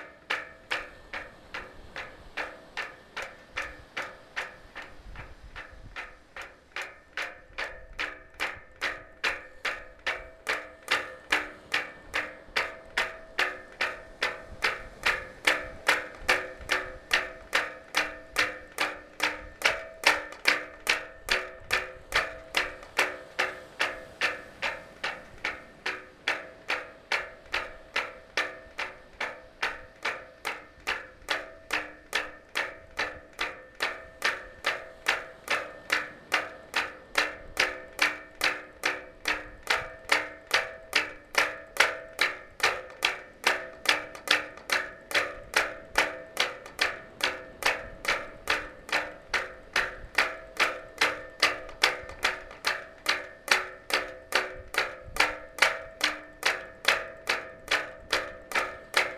Le Bois-Plage-en-Ré, France - Wind on the boats masts
The weather is good but there's a strong wind today. Masts of the small boats move on the wind.